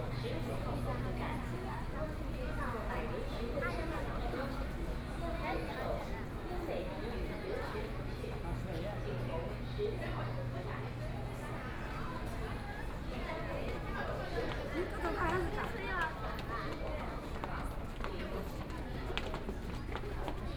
Yishan Road, Xuhui District - Line 9 (Shanghai Metro)
from Yishan Road Station to Xujiahui station, Messages broadcast station, walking in the Station, Binaural recording, Zoom H6+ Soundman OKM II